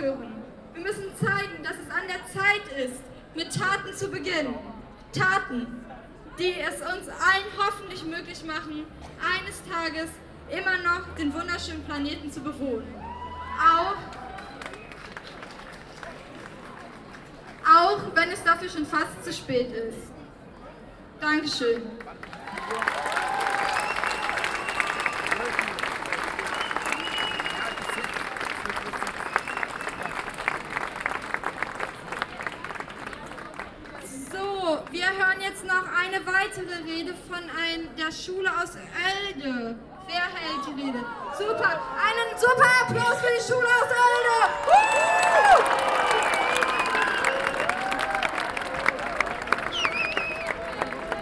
at the city church, Marktpl., Hamm, Germany - Fridays For Future 20 September 2019
local sounds of global demonstrations, “Alle fuers Klima”; noon bells of the city church when the demonstration of a record 2000 striking pupils, friends and parents reaches the market for the speeches …
see also
local paper 20.09.19
Nordrhein-Westfalen, Deutschland